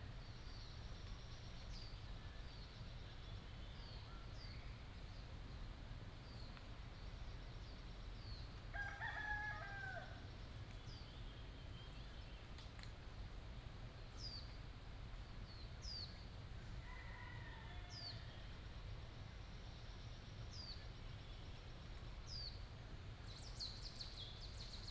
in the morning, Chicken sounds, Chirp